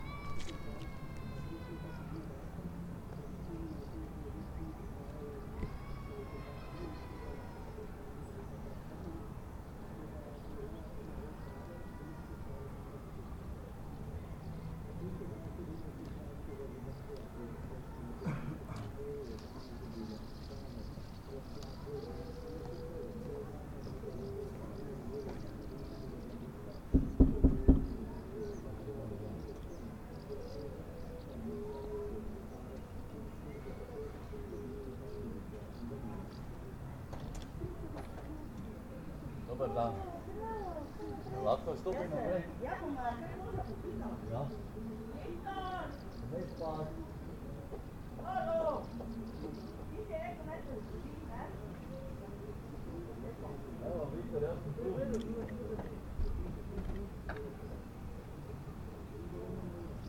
Meljska cesta, Maribor, Slovenia - corners for one minute
one minute for this corner: Meljska cesta 72